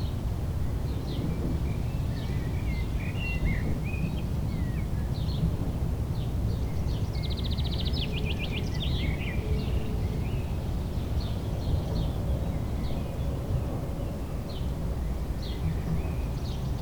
burg/wupper: ritterplatz - the city, the country & me: nearby a crossing of country lanes
singing birds, plane crossing the sky and in the background the sound of the motorway a1
the city, the country & me: may 6, 2011